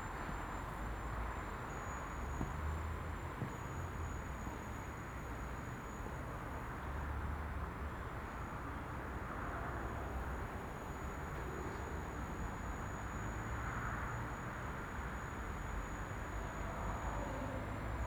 Berlin, Plänterwald station - station walk
walk in s-bahn station Plänterwald, vietnamese flower sellers talking, escalator, elevator, hall ambience. this station seems to be out of service, very few people around